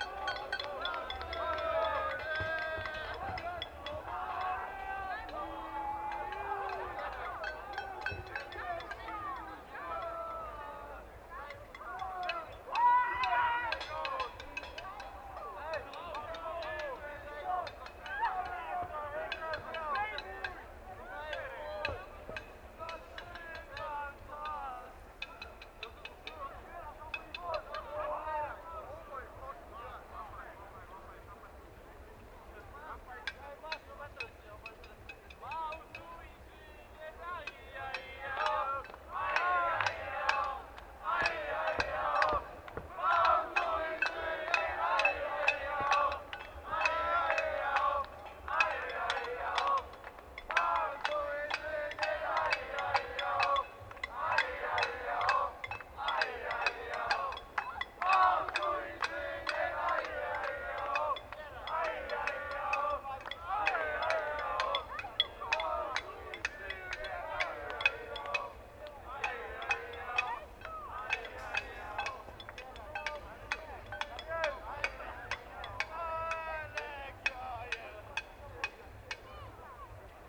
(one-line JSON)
{"title": "Kortowo, Olsztyn, Polska - Engineer accolade (2)", "date": "2013-02-08 23:19:00", "description": "University campus. Local students tradition is that freshly graduated engineer or master of science must be thrown into the Kortowskie lake by his collegues. Also in winter...", "latitude": "53.75", "longitude": "20.45", "altitude": "99", "timezone": "Europe/Warsaw"}